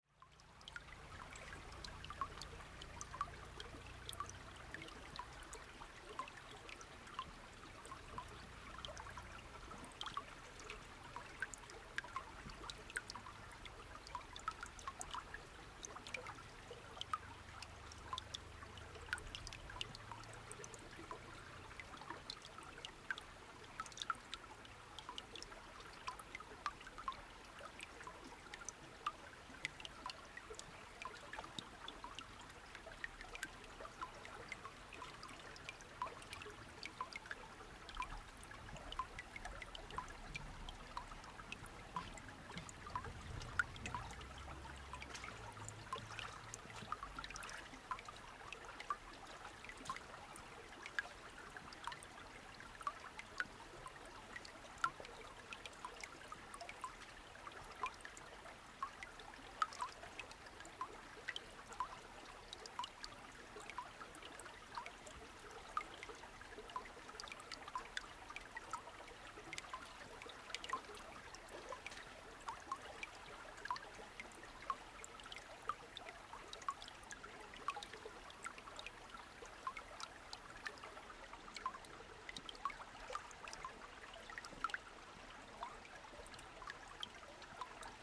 種瓜坑溪, 成功里 Puli Township - Sound of water droplets
streams, Sound of water droplets
Zoom H6 XY